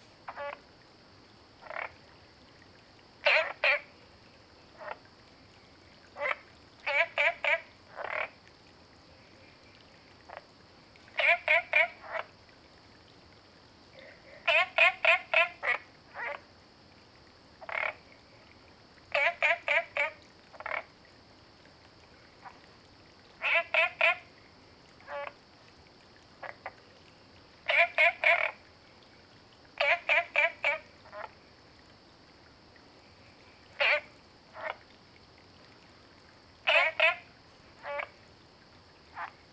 Green House Hostel, Puli Township - Early morning
Frogs chirping, at the Hostel, Early morning
Zoom H2n MS+XY